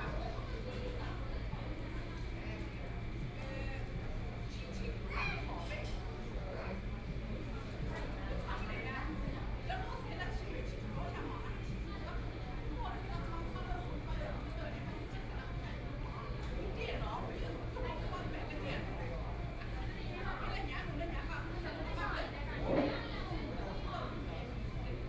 Yangpu District - In the fast-food restaurant
In the fast-food restaurant（KFC）, Binaural recording, Zoom H6+ Soundman OKM II